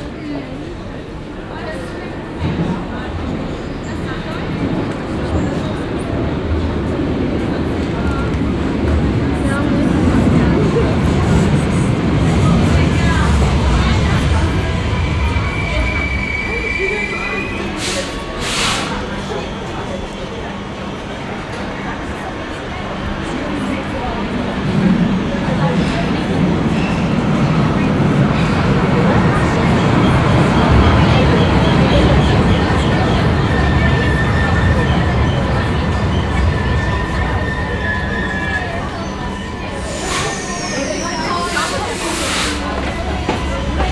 Stadtkern, Essen, Deutschland - essen, berliner platz, subway station
At the subway station, Berliner Platz.
The sound of peoples voices and steps on the gate way. Then two trams access the station, people enter and the trams leave.
An der U- bahn Station Berliner Platz. Der Klang der Stimmen von Menschen und Schritten auf den Bahnsteigen. Dann die Ankunft von zwei Bahnen. Menschen gehen in die Bahnen. Abfahrt.
Projekt - Stadtklang//: Hörorte - topographic field recordings and social ambiences